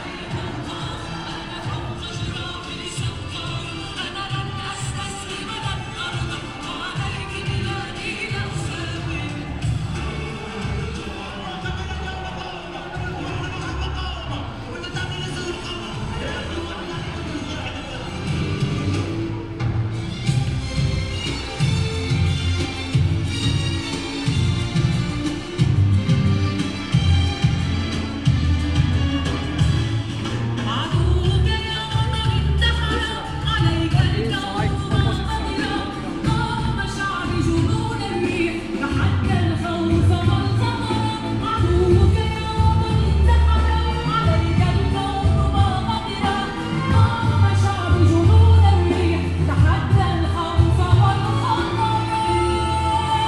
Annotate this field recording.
1st of May demonstration passing-by, (Sony PCM D50, Primo EM172)